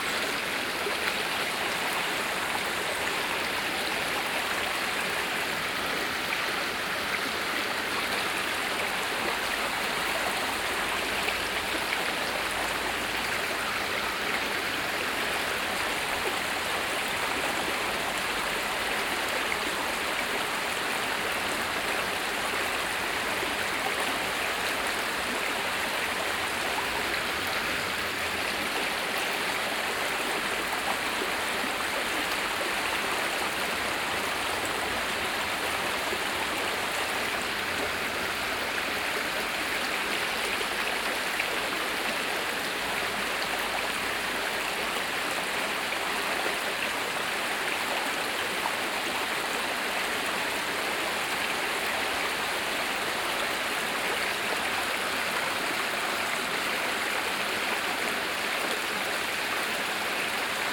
Chem. de la Préhistoire, Sauveterre-la-Lémance, France - La Lémance River
Tech Note : SP-TFB-2 binaural microphones → Sony PCM-M10, listen with headphones.
24 August 2022, 15:24